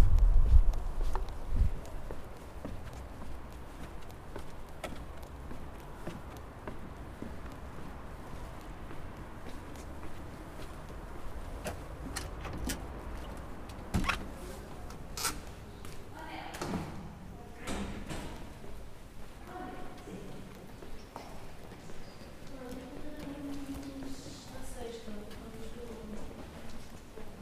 Nossa Senhora do Pópulo, Portugal - Soundwalk from Ep1 to Ep2
Recorded with a ZoomH4N. Sound-walking from Ep1 - 20 to Ep2 Parking Lot. Some wind.